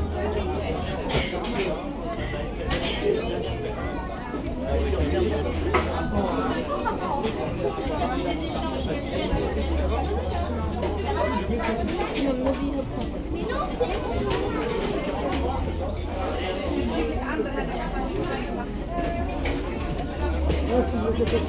Rambouillet, France - Skating rink
the waiting room of a small skating rink. It's completely crowded.